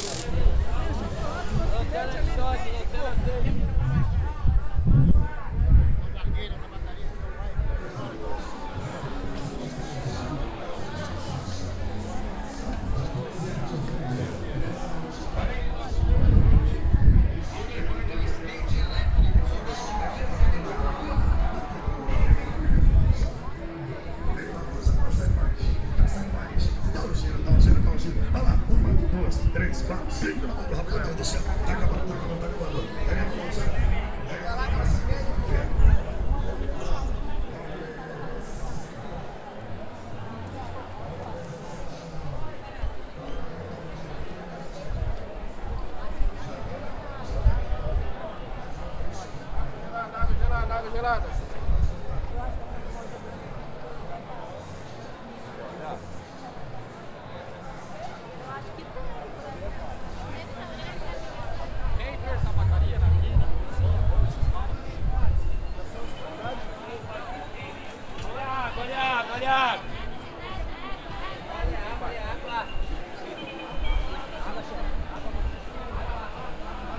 Rua 25 de Março - Centro, São Paulo - SP, 01021-200, Brasil - 25 de Março
Gravação realizada na rua 25 de Março, maior centro comercial da América Latina.